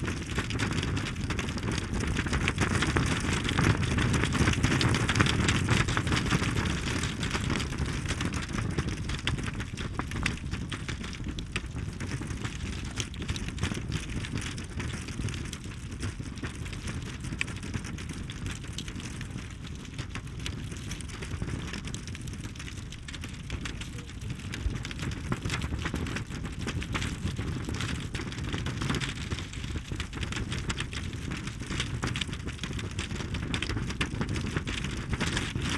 Berlin-Tempelhof, Deutschland - barrier tape fluttering in wind
barrier tape marks a sanctuary for birds and other animals living on the ground. tape fluttering in cold north wind.
(SD702, Audio Technica BP4025)
1 April 2013, 15:20